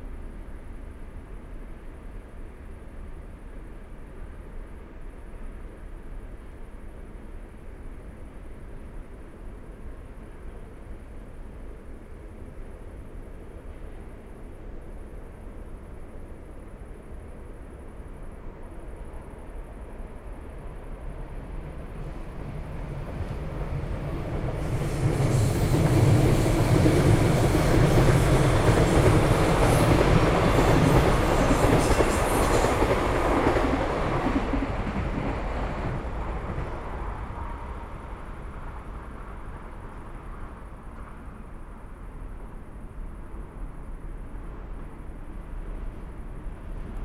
Neustadt-Nord, Cologne, Germany - trains at night
Köln, Schmalbeinstr. night ambience, trains of all sort pass here at night, but the cargo trains are most audible because of their low speed and squeaky brakes. it's the typical night sound in this area
(Sony PCM D50, DPA4060)
Nordrhein-Westfalen, Deutschland, European Union, 18 July, 00:05